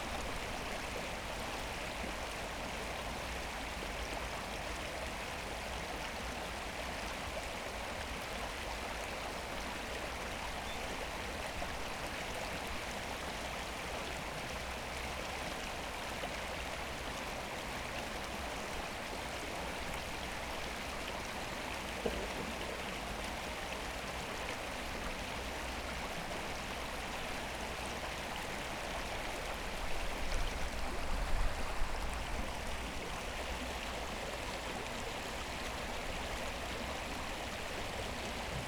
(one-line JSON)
{"title": "Wuhleteich, Berlin - river Wuhle flow", "date": "2018-03-09 17:00:00", "description": "river Wuhle water flow, near pond (Wuhleteich)\n(SD702, SL502 ORTF)", "latitude": "52.53", "longitude": "13.58", "altitude": "45", "timezone": "Europe/Berlin"}